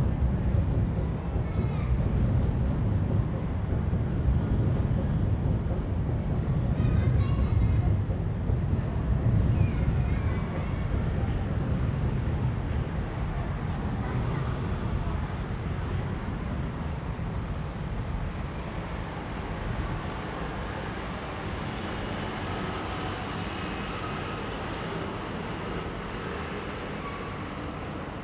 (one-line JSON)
{"title": "Sec., Beitou Rd., 北投區, Taipei City - Train travel through", "date": "2015-07-30 19:18:00", "description": "Train travel through\nZoom H2n MS+XY", "latitude": "25.13", "longitude": "121.50", "altitude": "12", "timezone": "Asia/Taipei"}